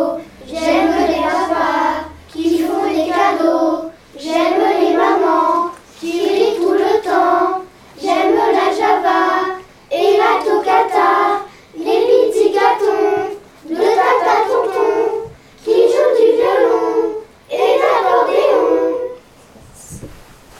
Irai, France - Ecole d'Irai
Les enfants chantent à l'école d'Irai, Zoom H6